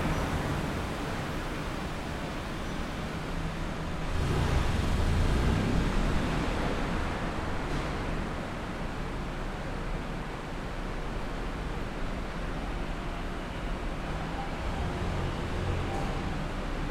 Aotea Center Underground Carpark
Under Ground car park